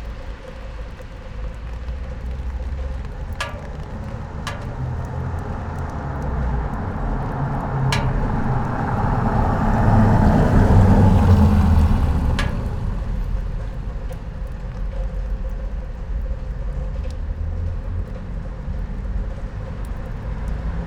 rain gutter, tyrševa - night rain traffic